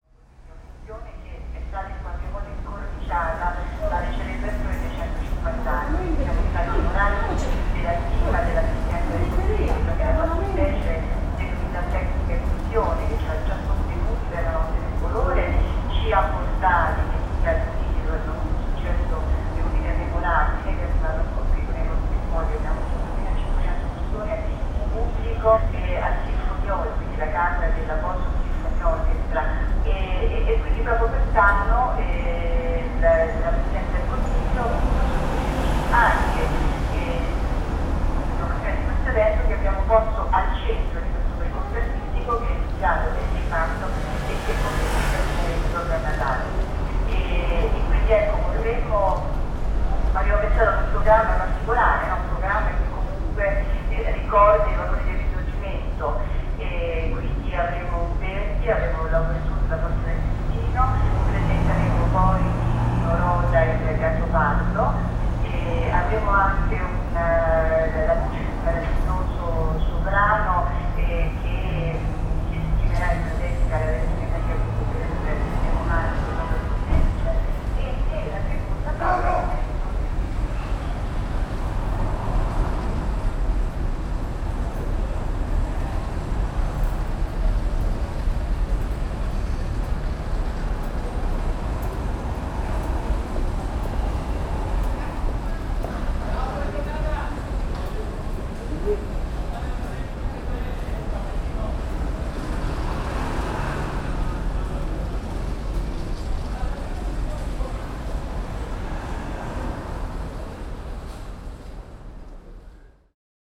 Trapani Train Station, radio talk in the loudspeakers from the station.